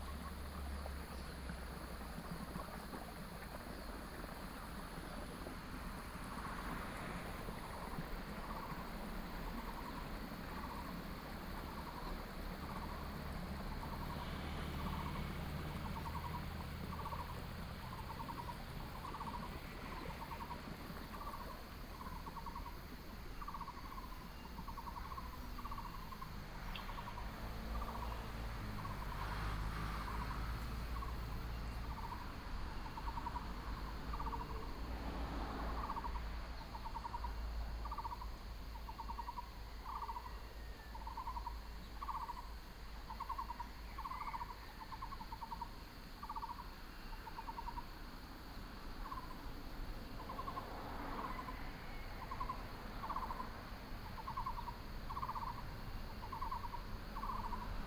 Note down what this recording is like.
Beside the fruit farmland, A variety of birds call, Chicken cry, stream, Traffic sound